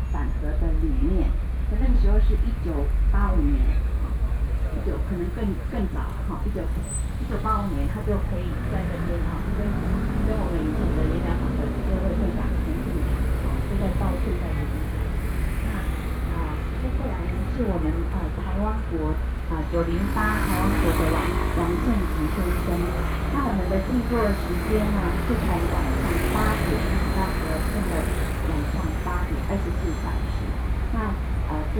Legislative Yuan, taipei - sit-in protest
the event to stage 24-hour hunger strike, against nuclear power, Sony PCM D50 + Soundman OKM II
台北市 (Taipei City), 中華民國